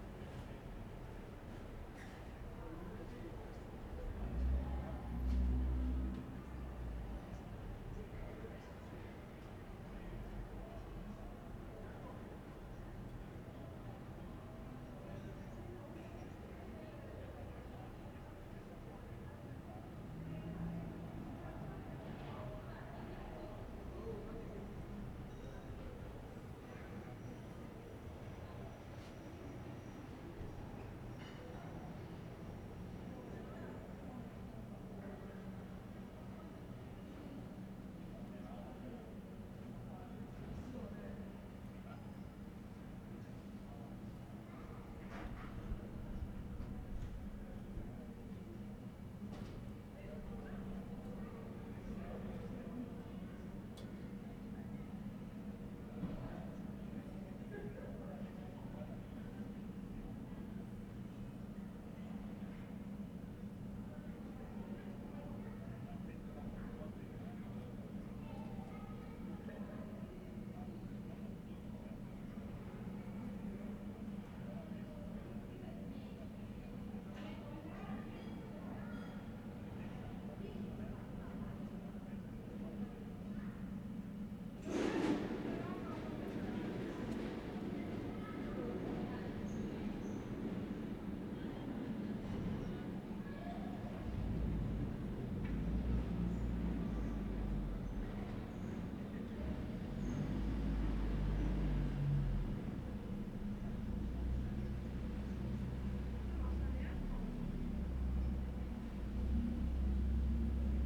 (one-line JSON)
{"title": "Ascolto il tuo cuore, città. I listen to your heart, city. Several chapters **SCROLL DOWN FOR ALL RECORDINGS** - Paysage sonore avec chien et petards aux temps du COVID19", "date": "2020-03-20 13:08:00", "description": "\"Paysage sonore avec chien et petards aux temps du COVID19\" Soundscape\nFriday March 20th 2020. Fixed position on an internal terrace at San Salvario district Turin, ten days after emergency disposition due to the epidemic of COVID19.\nStart at 1:08 p.m. end at 1:40 p.m. duration of recording 30'31''", "latitude": "45.06", "longitude": "7.69", "altitude": "245", "timezone": "Europe/Rome"}